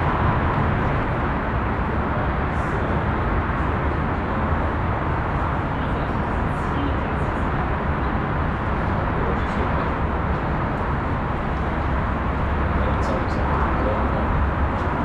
Rheinpark Bilk, Düsseldorf, Deutschland - Düsseldorf, Rheinturm, open platform
At the open, outdoor platform of the tower. A more long recording of the dronelike sound of the city traffic. Also some doors banging at the platform entrance.
This recording is part of the exhibition project - sonic states
soundmap nrw - topographic field recordings, social ambiences and art places
Düsseldorf, Germany, 22 November